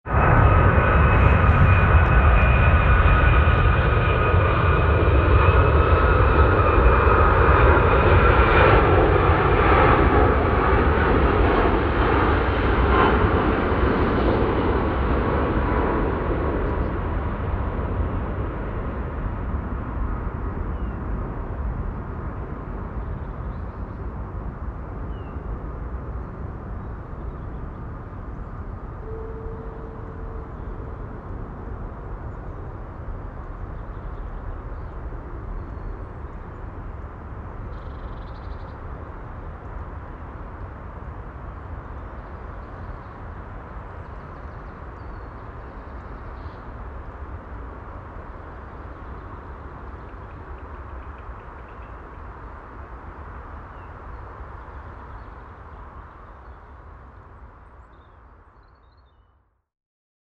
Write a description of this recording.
flugzeugüberflug im wald hinter flugfeld, nachmittags, soundmap nrw: social ambiences/ listen to the people - in & outdoor nearfield recordings